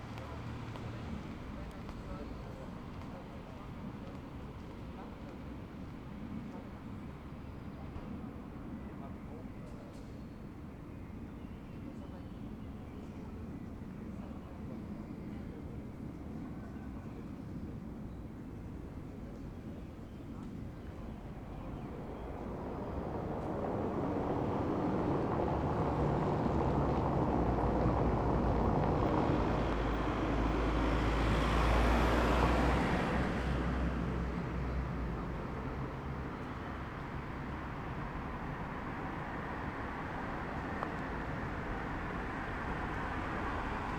Berlin: Vermessungspunkt Maybachufer / Bürknerstraße - Klangvermessung Kreuzkölln ::: 10.07.2010 ::: 04:25